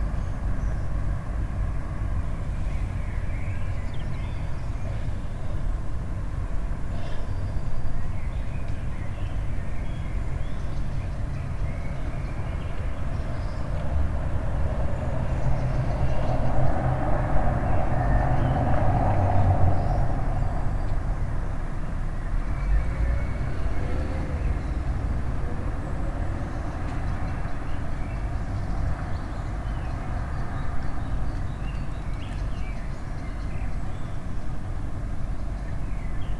berhnard-nocht-str.16
5.30am jetlag, early spring